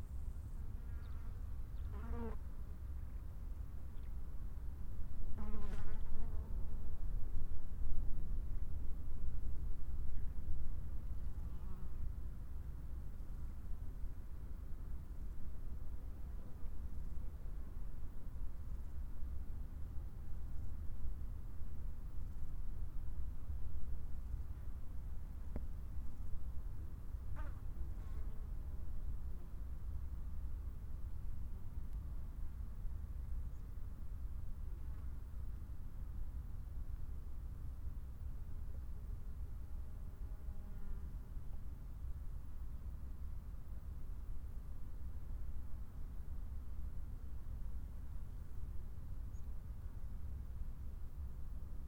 La Crau, Saint-Martin-de-Crau, Frankreich - La Crau. Insects, birds and an aeroplane
Soundscape of the Crau, an arid stony desert area. Unfortunately also close to Marseille airport... otherwise it would just be insects and birds.
Binaural recording. Artificial head microphone set up on a stone heap. Microphone facing north east. Recorded with a Sound Devices 702 field recorder and a modified Crown - SASS setup incorporating two Sennheiser mkh 20 microphones.
17 October 2021, 13:04